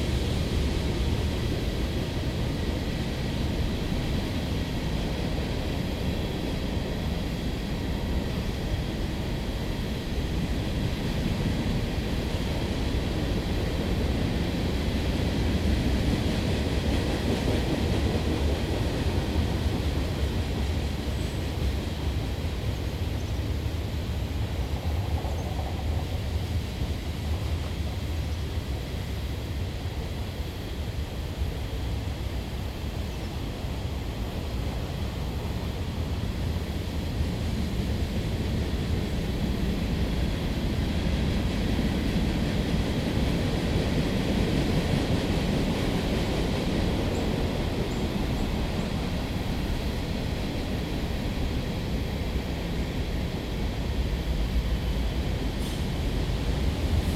Stinging Nettle Trail, Ballwin, Missouri, USA - Stinging Nettle Freight Train
Stinging Nettle Trail. Union Pacific freight train passing in the woods.
Missouri, United States of America, 27 September 2020